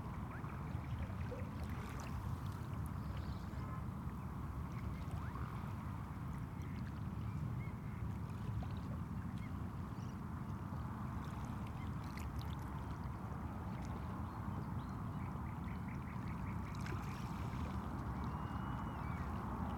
evening sounds at Habneeme beach near Tallinn
Harjumaa, Estonia, 17 May 2010